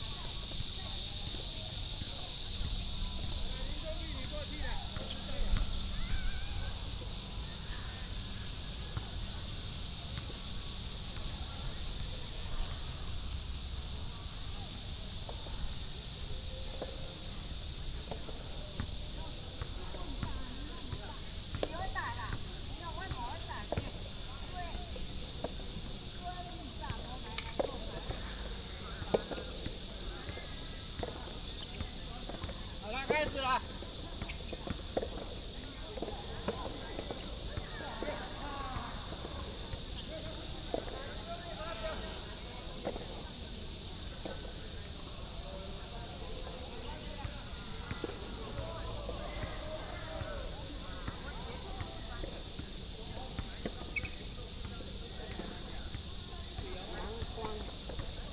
Next to the tennis court, Cicada cry